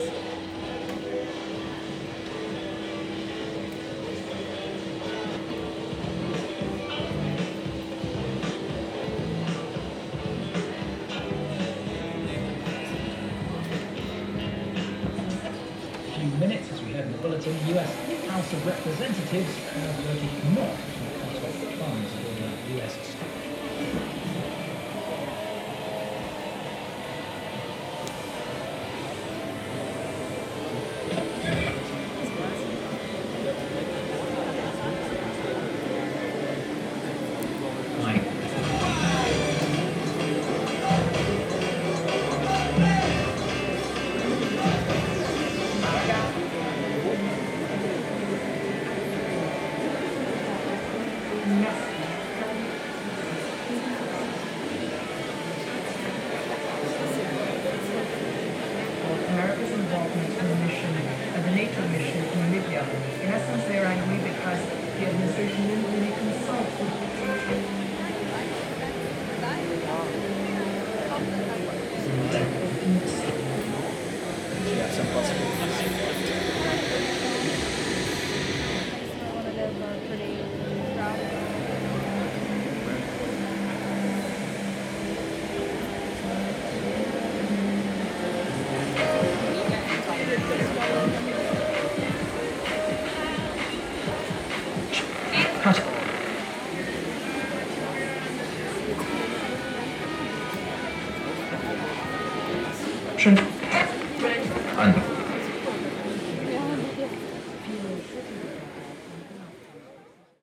Geschwister-Scholl-Straße, Potsdam West, Potsdam, Deutschland - Villa des Herrn von Holst, Potsdam - LOCALIZE Festival, performance of John Cage's
Villa des Herrn von Holst, Potsdam - LOCALIZE Festival, performance of John Cage's "Radio Music" by Simon Vincent. [I used the Hi-MD recorder Sony MZ-NH900 with external microphone Beyerdynamic MCE 82]